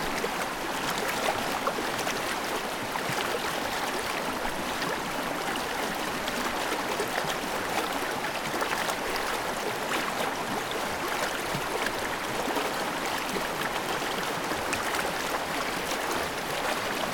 Janeiro de Baixo, Portugal - Zêzere River running
In a very small village surrounded by the Zêzere river.
I used the EM 9900 shotgun from The T.bone into the ZOOM H6 to capture the detail of the water running at the same time I used the MS from the ZOOM H6 to record the character of the river.
I combine the two recordings. I was hearing this.
No volumes or other parameters were adjusted. The footages are RAW.
7 December 2014